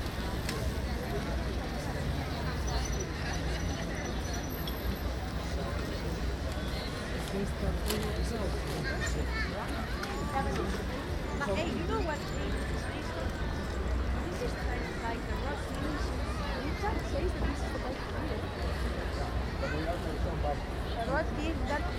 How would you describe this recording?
Binuaral recording of the general atmosphere.